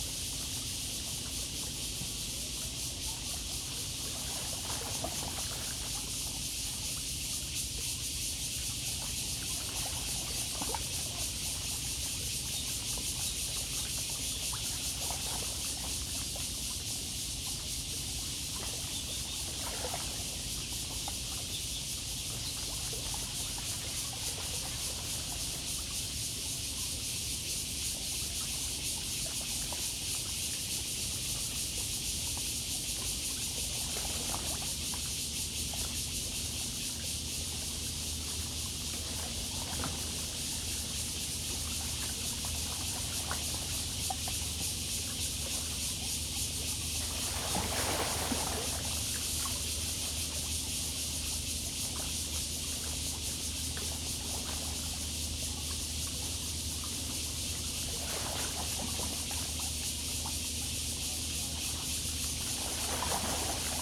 Ln., Zhongzheng Rd., Tamsui Dist. - On the river bank
On the river bank, Acoustic wave water, Cicadas cry, There are boats on the river
Zoom H2n MS+XY